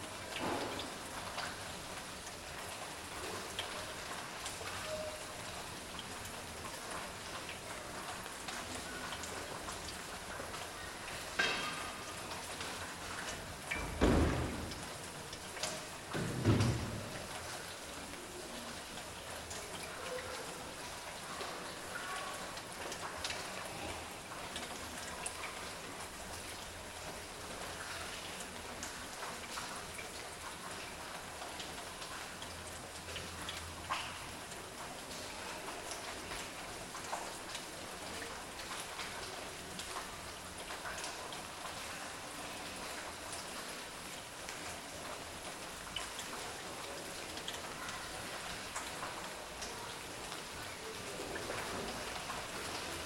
Pillauer Str., Berlin, Germany - Light July Rain and Birds in Courtyard
Recorded out the window on the third floor facing into the courtyard.
The courtyard is approximately 100sqm and has a big tree in the middle.
Recorded with a Zoom H5.